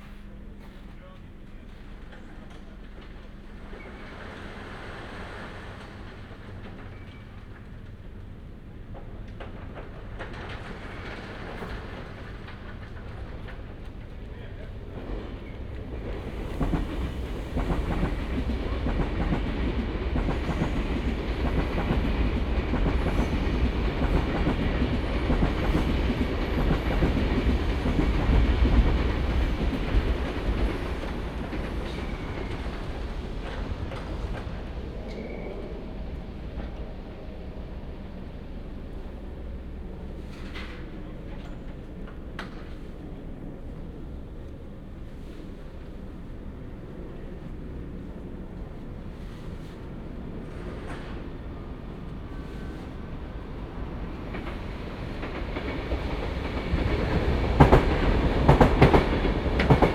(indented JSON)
{
  "title": "Viaduktstrasse, Zürich, Schweiz - Letten-Viadukt",
  "date": "2009-12-04 14:12:00",
  "description": "Walking and pause and listening on a cold and sunny day in December on this beautiful pathway next to the railway tracks, Letten-Viadukt in Zürich 2009.",
  "latitude": "47.39",
  "longitude": "8.52",
  "altitude": "409",
  "timezone": "Europe/Zurich"
}